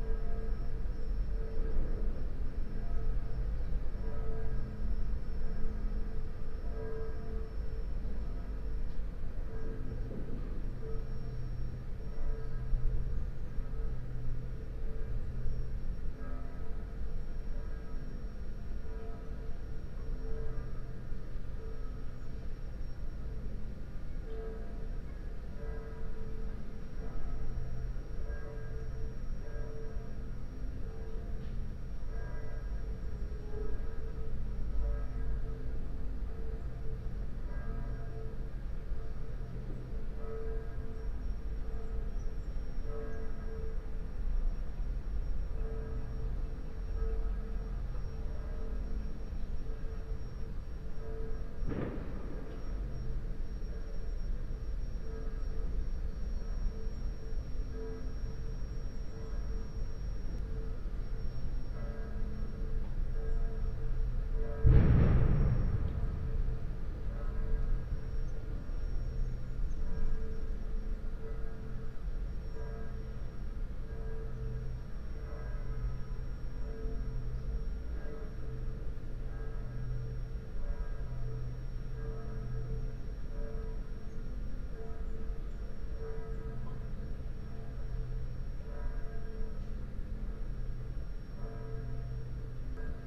Berlin Bürknerstr., backyard window - backyard ambience /w bells
backyard ambience, churchbells, a few firecrackers
(raspberry Pi Zero + IQaudio Zero + 2x PUI AOM 5024)
Berlin, Germany, 31 December